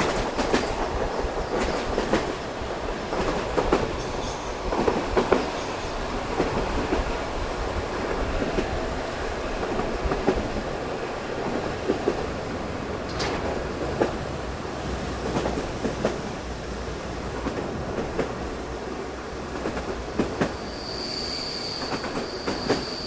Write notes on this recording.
the same sound that cradles you into sleep and wakes you up, endless bulgarian railroad impressions, tacted by a fractal beauty of never equal repetition.